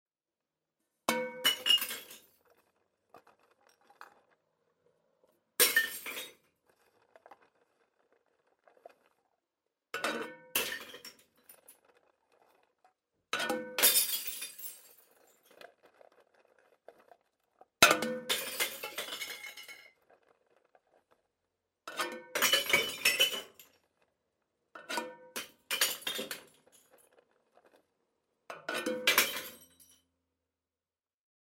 parking place at super market.
stafsäter recordings.
recorded july, 2008.